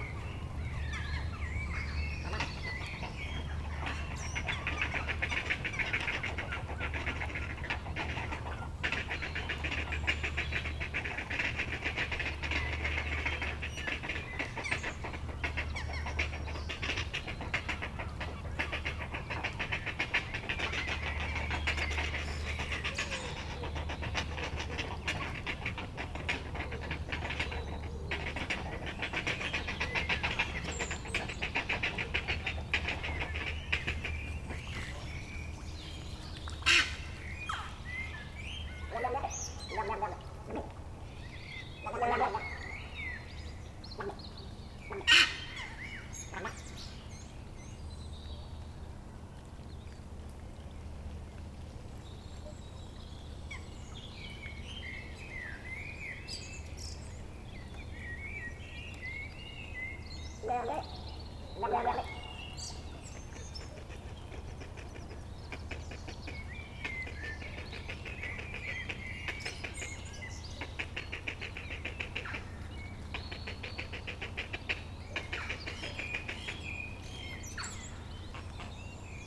Atlantic Pond, Ballintemple, Cork, Ireland - Heron Island: Dusk
Lots of birds, including a Robin, Ducks, Blackbirds, Little Grebe, Little Egret, Crows, Heron chicks making a ruckus, and some fantastic adult Heron shrieks. A pair of Swans glide past.
Recorded on a Roland R-07.